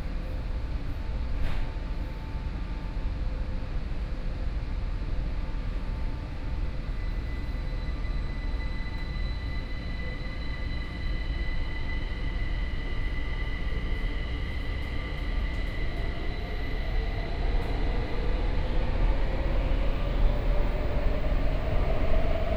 from Cultural Center station to Weiwuying Station
Lingya District, Kaohsiung City, Taiwan, May 2014